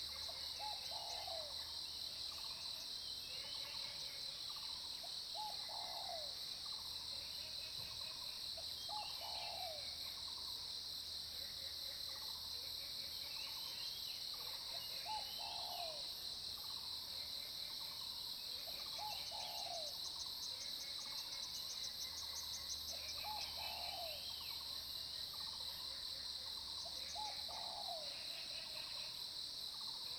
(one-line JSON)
{"title": "種瓜路4-2號, 桃米里 Puli Township - Early morning", "date": "2015-06-10 05:36:00", "description": "Frogs chirping, Early morning, Bird calls, Cicadas sound, Insect sounds\nZoom H2n MS+XY", "latitude": "23.94", "longitude": "120.92", "altitude": "503", "timezone": "Asia/Taipei"}